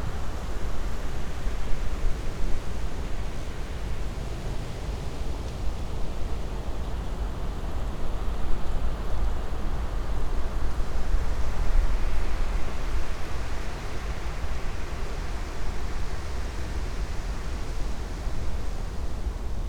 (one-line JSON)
{"title": "Larix wind. Pušyno g., Utena, Lithuania - Larix wind", "date": "2018-09-27 16:38:00", "latitude": "55.52", "longitude": "25.63", "altitude": "136", "timezone": "Europe/Vilnius"}